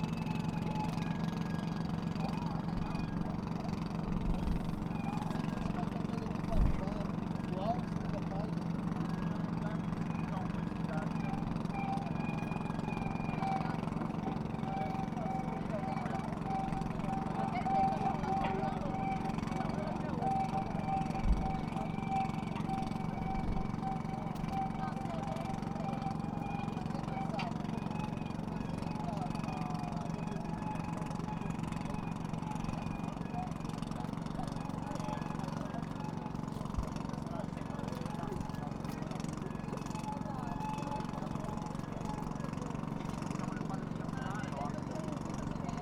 Porto, Passeio Allegre - fishermen at work
seaside, fishermen at work
Portugal, 16 October 2010